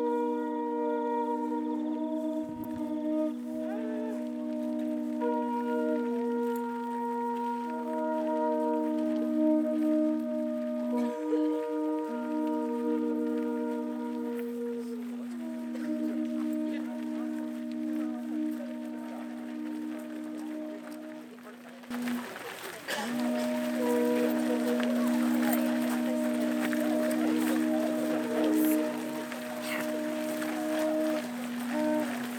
Te Atatu Peninsula, Auckland, New Zealand - Dawn Opening Ceremony
Dawn opening ceremony for the 2016 Harbourview Sculpture Trail
5 March